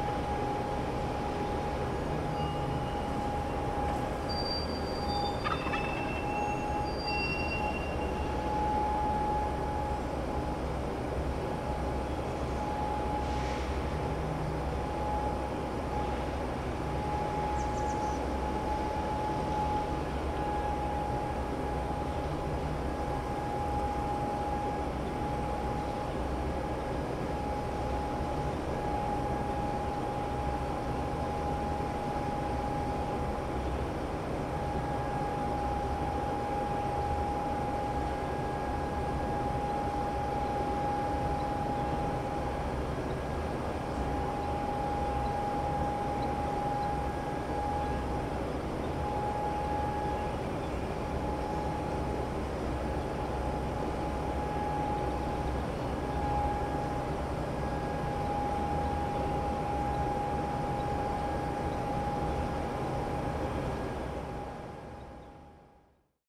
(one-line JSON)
{"title": "wild turkeys and construction noise, Headlands CA", "description": "early morning recording trying to capture the wild turkey call which was masked by some road surfacing process", "latitude": "37.83", "longitude": "-122.52", "altitude": "41", "timezone": "Europe/Tallinn"}